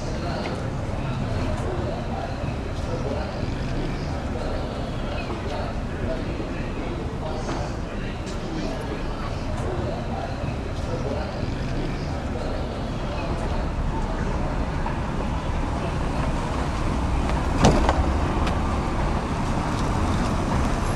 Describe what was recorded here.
noisy soundscape. On this neighborhood street, every Thursday an ice cream stand passes by with loud horns, there are heavy cars, airplanes passing by and a building construction in the background.